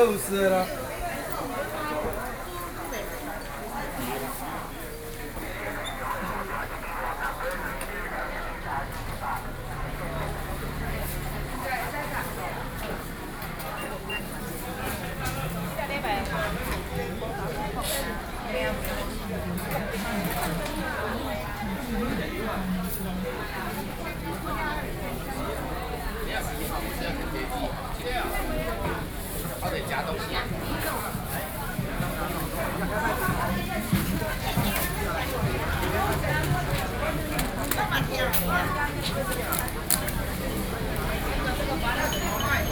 Ln., Zhongzheng Rd., Xindian Dist. - Traditional markets